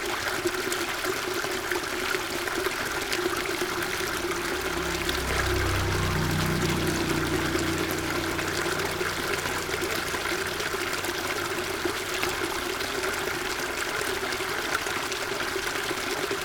Linkou Dist., New Taipei City - Farmland
Stream, Farmland, Irrigation waterway
Sony PCM D50